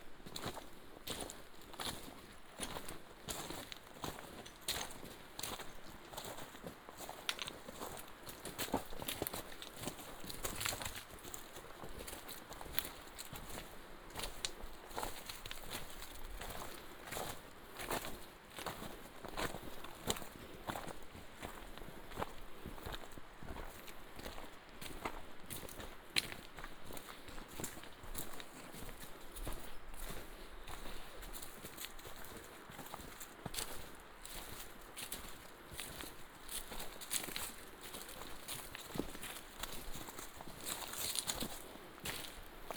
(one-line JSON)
{"title": "大竹溪, 達仁鄉台東縣 - Follow the Aboriginal Hunters", "date": "2018-04-05 22:08:00", "description": "Stream sound, Follow the Aboriginal Hunters walking along the old trail, Footsteps, goat", "latitude": "22.44", "longitude": "120.86", "altitude": "262", "timezone": "Asia/Taipei"}